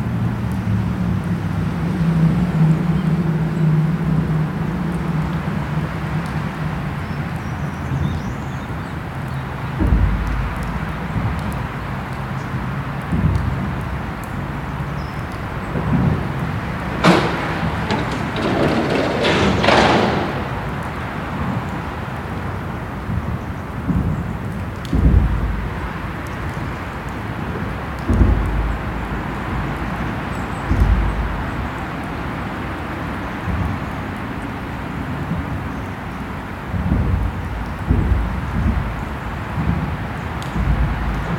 Parking Garonne, Chem. de la Garonne, Toulouse, France - bridge, metalic structure 2
pont, structure metalique, trafic, voiture, oiseaux
goute d'eau du pont